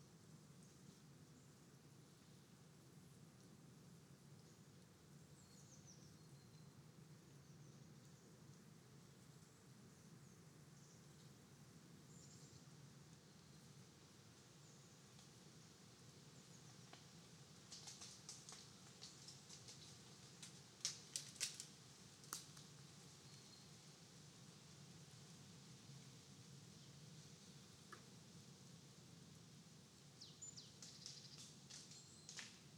Naturpark Schönbuch: Vögel, fallende Blätter und Nüsse
Schönbuch Nature Park: Birds, falling leaves and nuts
(Tascam DR-100MX3, EM172 (XLR) binaural)